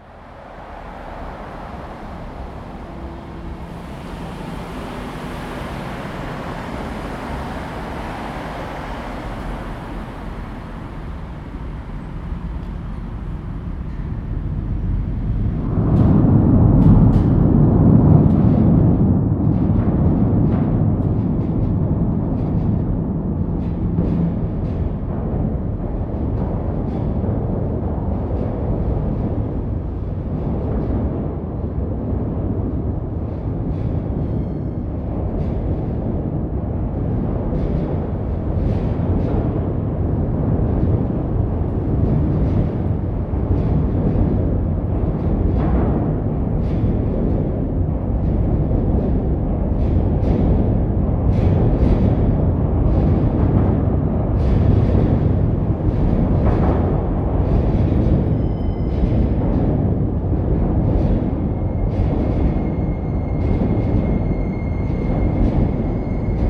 Freight Train From Under the Bridge - 30 Peter Hughes Dr, Fremantle WA 6160, Australia - Freight Train Under The Bridge
This is a fairly amateur recording, but it is one Ive been trying to get for a couple of weeks now.
The sound under the bridge when a freight train comes past is quite other-worldly, and very different from the passenger train. I have tried to capture this many times, but the timing is awkward as I need to cycle quickly from work to catch this particular freight train. Sometimes I'm too late, sometimes I'm early and I leave before its arrived.
What I love about it is how quickly the sound attacks after a very feint and relatively long build-up. The locomotive is quite loud, and the following carriages are relatively quieter. However, the sound just vanishes as quickly as it attacks when it leaves the bridge and leaves you in a relative silence.
The bridge underneath is an interesting shape - I will take a photo of it and add it to this description.
zoom h2n surround mode. zoom windjammer. ATH-Mx40 headphones.
30 November 2017, ~18:00